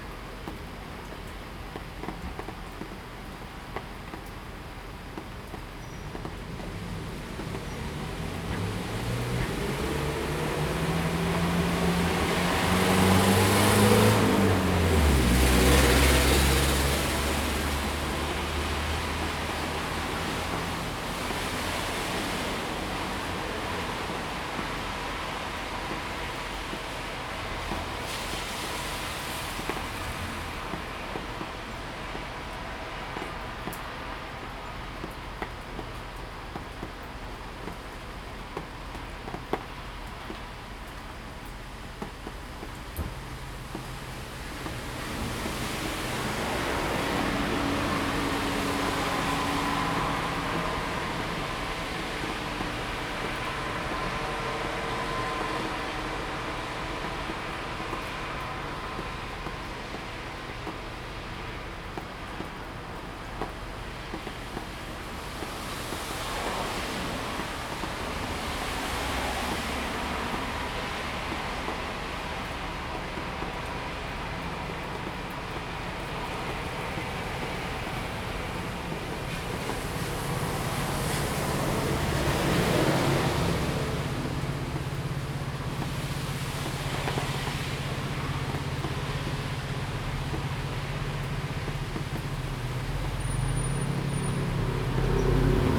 Rainy Day, Traffic Sound
Zoom H2n MS+XY